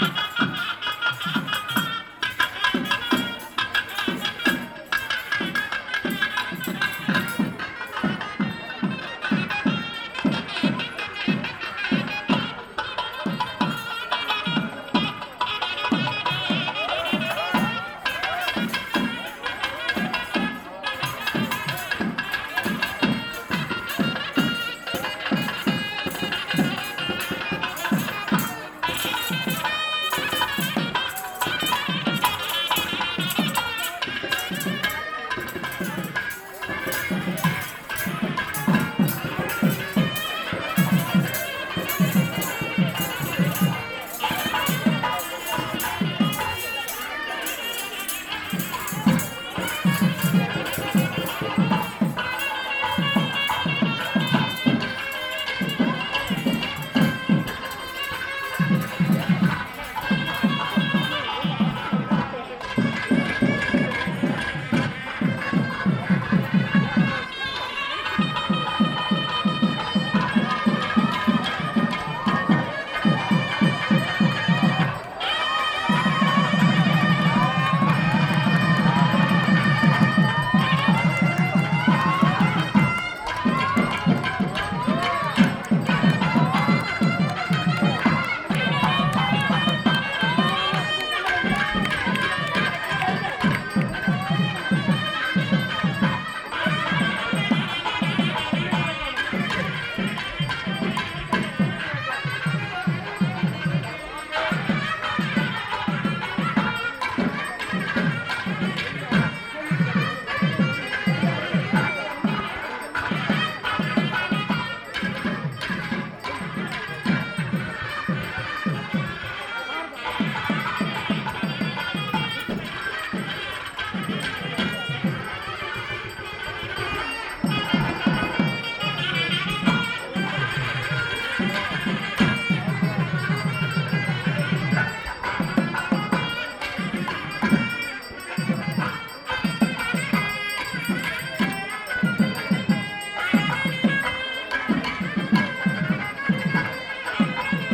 Sri Kamadchi Ampal Temple, Hamm, Germany - Festival getting ready to move the Goddess
We return from the bazar just in time to join another procession around the temple. Everyone is gathering in front of the temple. Plates of offerings being passed over heads to the priest. Some women are carrying bowls of burning and smoking offerings on their heads. With much physical and sonic participation the statue of the Goddess is hoisted onto an ornate carriage. Everyone near grabs hold of one of the two thick robs pulling and eventually the carriage gets into motion. Women are gathered behind the carriage pushing and chanting...